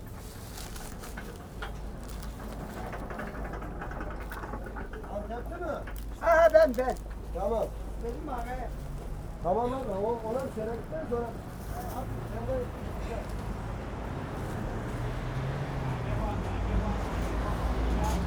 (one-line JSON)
{"title": "Cheap tomatoes, Turkish supermarket", "date": "2011-09-24 12:20:00", "description": "Everyday sound in busy Turmstraße", "latitude": "52.53", "longitude": "13.33", "altitude": "40", "timezone": "Europe/Berlin"}